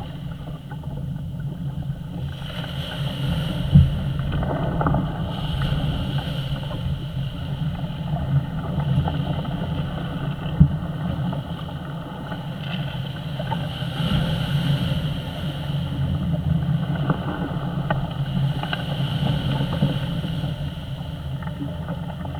A bolt in a breakwater, Southwold, Suffolk, UK - Bolt
Recorded with a cheap piezo contact mic held against a bolt in a long wooden breakwater.
MixPre 3 with a chinese contact mic costing £2.00
England, United Kingdom, 7 July 2018